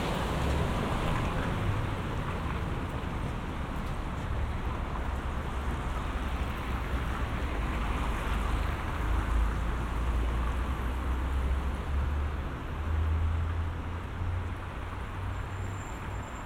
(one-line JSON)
{"title": "Средний проспект В.О., Санкт-Петербург, Россия - traveling by elevator in the business center", "date": "2019-02-09 17:37:00", "description": "Traveling by elevator in the business center.", "latitude": "59.94", "longitude": "30.28", "altitude": "17", "timezone": "Europe/Moscow"}